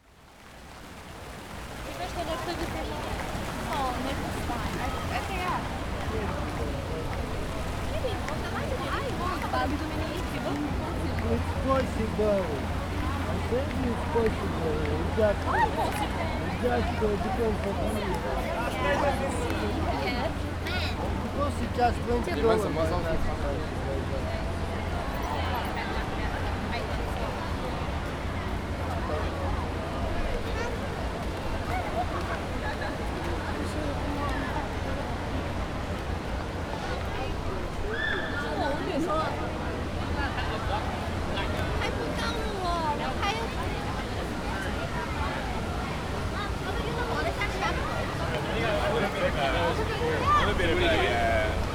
neoscenes: walking the water fountain